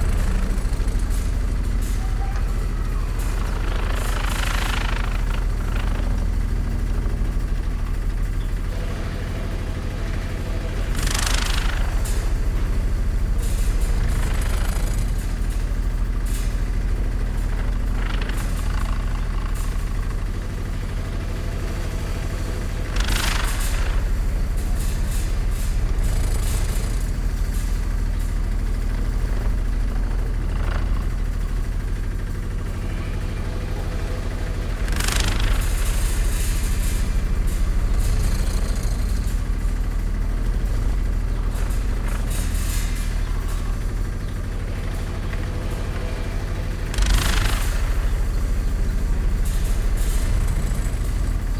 Friedelstr., Neukölln, Berlin - wastewater pump rattling
Berlin Friedelstr., construction site, wastewater pump, rattling gear
(Sony PCM D50, DPA4060)